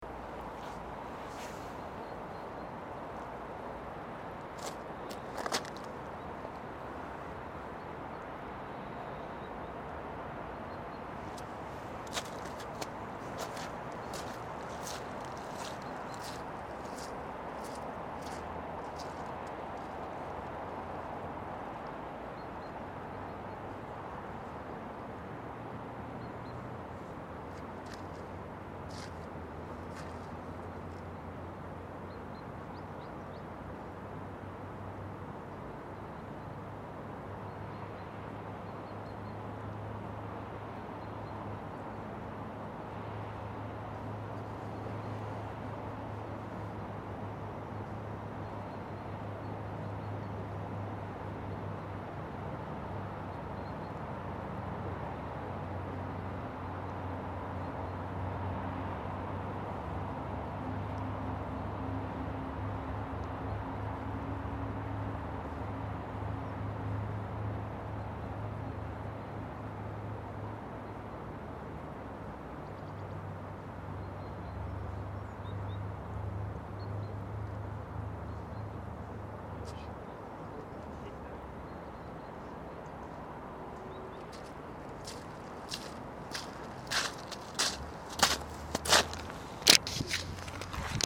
Онежская наб., Петрозаводск, Респ. Карелия, Россия - On the shore of lake Onega
On the shore of lake Onega. You can hear footsteps and the noise of cars passing on the road nearby. Faintly heard the chirping of birds.
Северо-Западный федеральный округ, Россия, 12 February 2020, 16:42